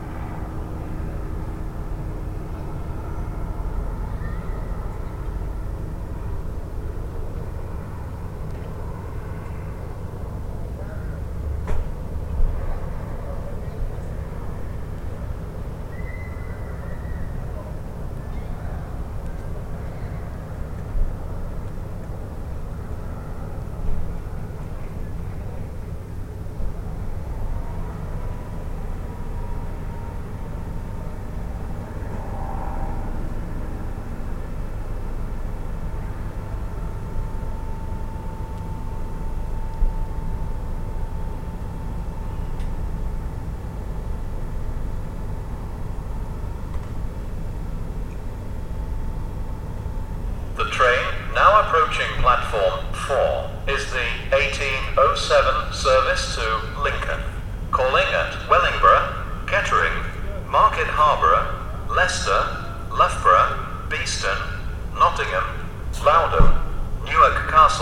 Bedford Railway Station, Platform 4.